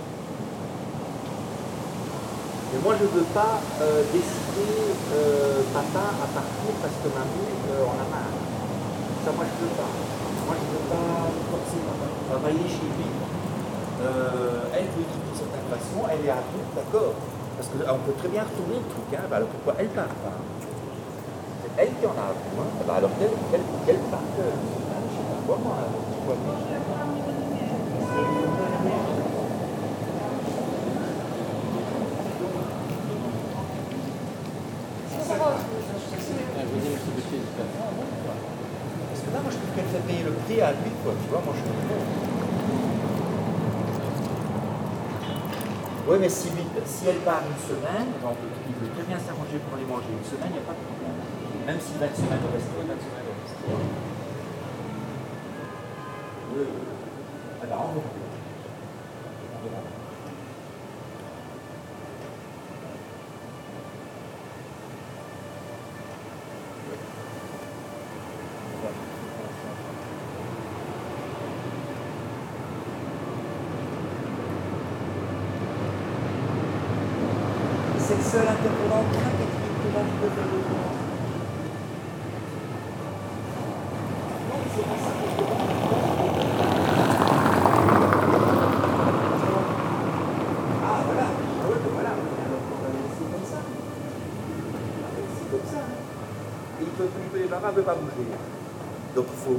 Bruxelles, Belgium - Quiet street
Into a very quiet area, a person having problems and loudly phoning in the street, wind in the trees, distant sound of sirens.